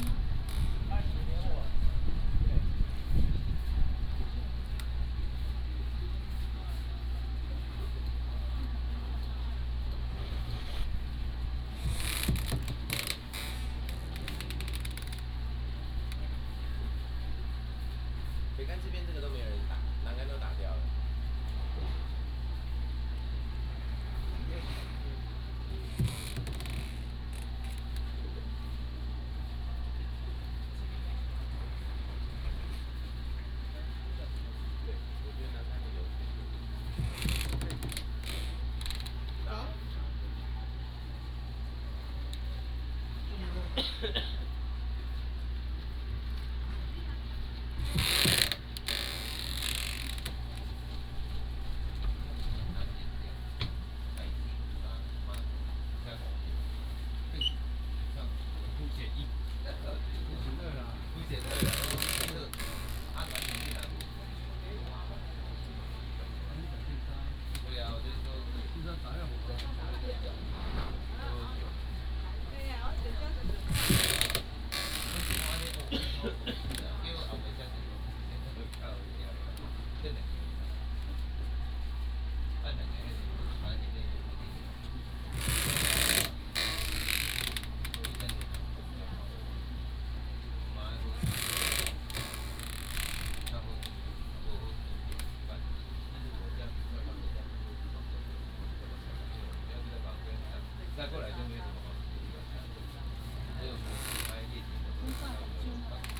{"title": "白沙碼頭, Beigan Township - On a yacht", "date": "2014-10-14 08:29:00", "description": "On a yacht, sitting at the end of the yacht, Tourists", "latitude": "26.21", "longitude": "119.97", "altitude": "4", "timezone": "Asia/Taipei"}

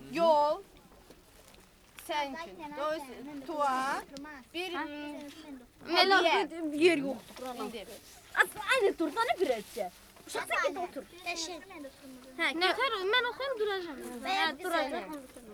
{"title": "Unnamed Road, Sabirabad, Azerbeidzjan - childeren in a camp full of refugees from war with Armenia", "date": "1994-12-10 15:32:00", "description": "childeren in a camp full of refugees from war with Armenia sing and dance.", "latitude": "39.98", "longitude": "48.47", "altitude": "1", "timezone": "Asia/Baku"}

